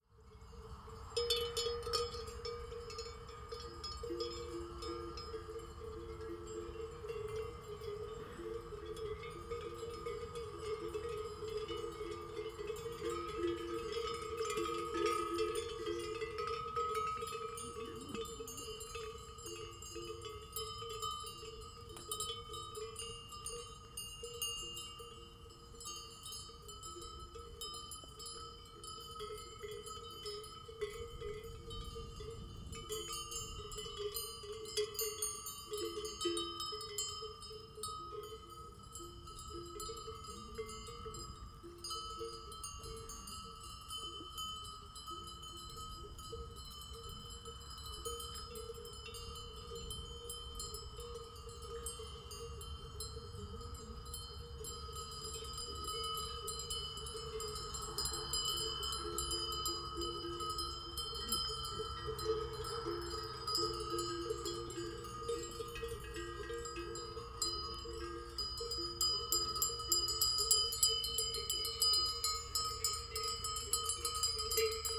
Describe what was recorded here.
a flock of cows and goats all with bells, rounded up by farmers (Sony PCM D50, DPA4060)